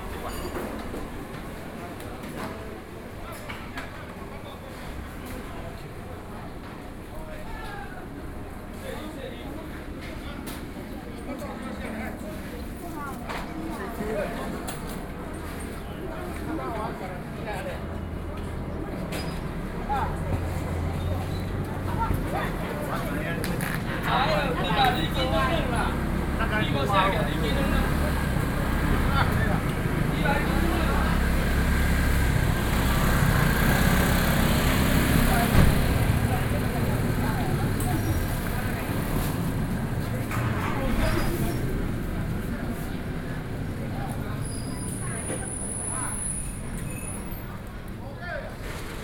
{"title": "Zhōngzhèng Rd, Xizhi District - Traditional markets", "date": "2012-11-04 07:22:00", "latitude": "25.07", "longitude": "121.66", "altitude": "17", "timezone": "Asia/Taipei"}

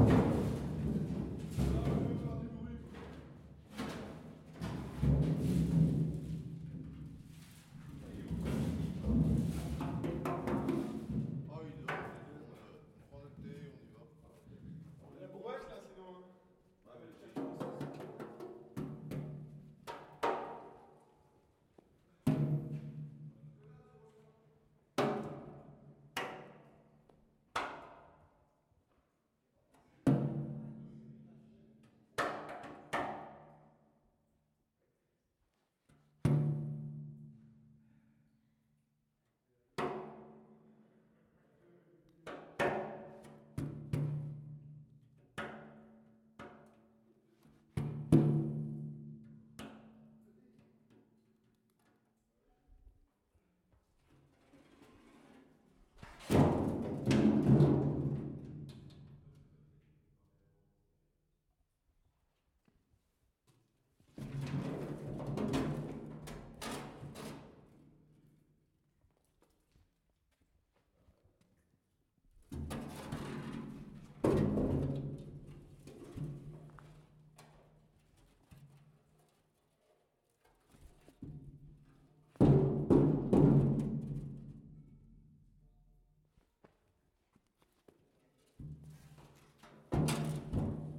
{"title": "Méry-sur-Oise, France - Oil drum in a abandoned underground Quarry", "date": "2015-01-19 05:37:00", "description": "On trouve toute sorte d'objets dans les carrières abandonnées d'Hennocque. Comme ce vieux baril rouillé.\nPlaying with an empty and rusty Oil drum in a abandoned underground Quarry.\n/zoom h4n intern xy mic", "latitude": "49.07", "longitude": "2.19", "altitude": "71", "timezone": "GMT+1"}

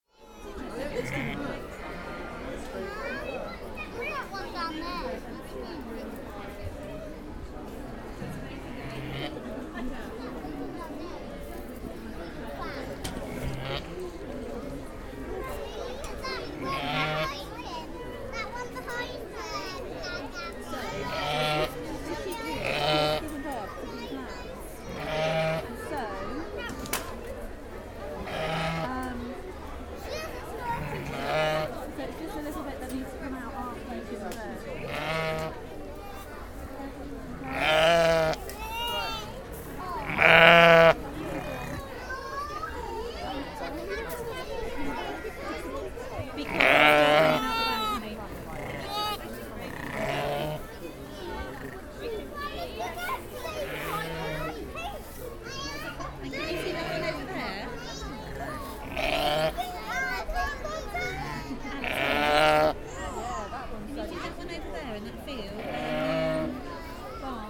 {"title": "The Lambing barn, Amners Farm, Burghfield, UK - Ewes and lambs, parents and children", "date": "2017-05-06 13:54:00", "description": "This barn is full of pregnant ewes, and recently lambed ewes paired up in pens with their babies. Chris Webber was telling me he'd been up at 5am on the morning of the open day to deliver several sets of twins and triplets. The ewes are all sitting on lovely clean straw, patiently waiting, with huge sides. The recently lambed ewes are there with their babies, licking and cleaning them, and getting them to suckle. It's an amazing place to witness new life, but it's also very real and unromantic and I really enjoyed hearing the many conversations around me with parents explaining where babies come from. The ewes have a much deeper sound than the lambs, but you can occasionally hear the tiny bleatings of a just-born sheep in this recording.", "latitude": "51.42", "longitude": "-1.02", "altitude": "41", "timezone": "Europe/London"}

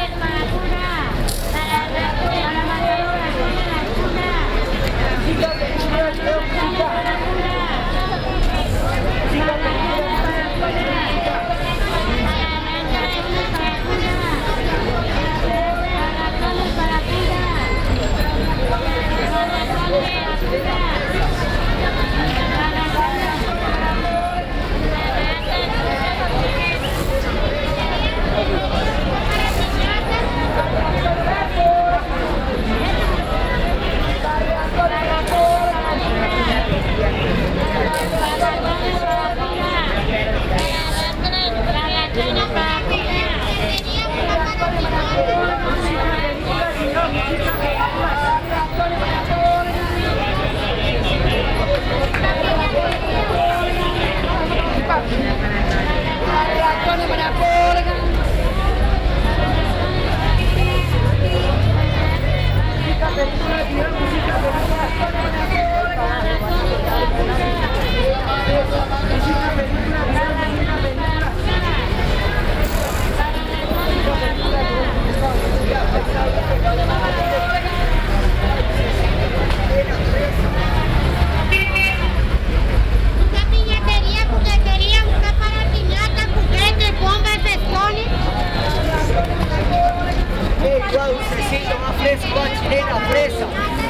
{"title": "Bogota, San Victorino", "date": "2011-03-05 09:06:00", "description": "San Victorino es el supermall de los pobres... en todo el centro de bogotá es un foco de resistencia comercial..encuentras todo de todo...", "latitude": "4.60", "longitude": "-74.08", "altitude": "2598", "timezone": "America/Bogota"}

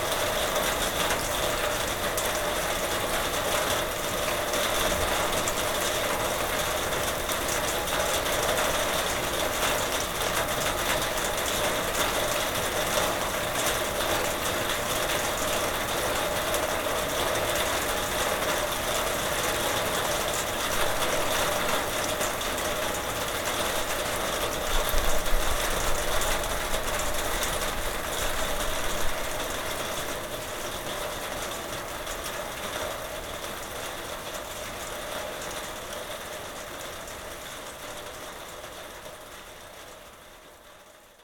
{"title": "Westergate, Woodgate, Chichester, UK - Sleet on garage door", "date": "2019-03-03 18:22:00", "description": "Recorded early evening using my Zoom H5. No special technique - garage door was open and I balanced the recorded on the door itself", "latitude": "50.83", "longitude": "-0.67", "altitude": "9", "timezone": "Europe/London"}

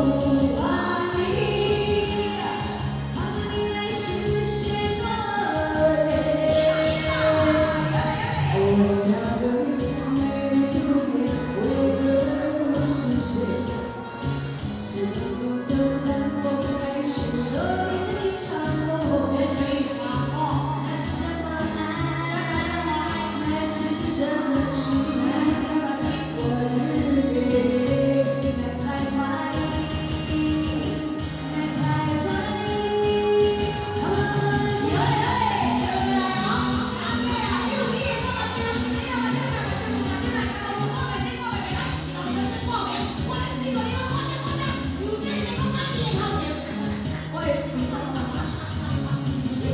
{"title": "Daren St., Tamsui Dist., Taiwan - Folk party", "date": "2015-06-22 19:52:00", "description": "Folk Evening party, Dinner Show, Host\nZoom H2n MS+XY", "latitude": "25.18", "longitude": "121.44", "altitude": "45", "timezone": "Asia/Taipei"}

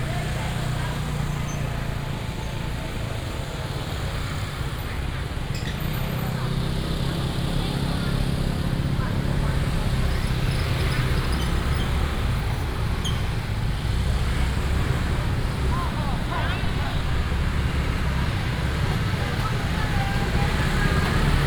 {"title": "Gonghe Rd., Chiayi City - Walking through the traditional market", "date": "2017-04-18 09:50:00", "description": "Walking through the traditional market, Traffic sound, Many motorcycles", "latitude": "23.48", "longitude": "120.46", "altitude": "42", "timezone": "Asia/Taipei"}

